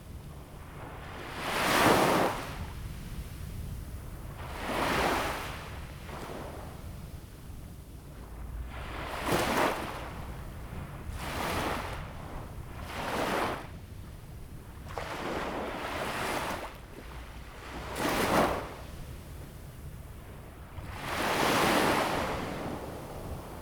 Artificial bay, Sound of the waves
Zoom H2n MS+XY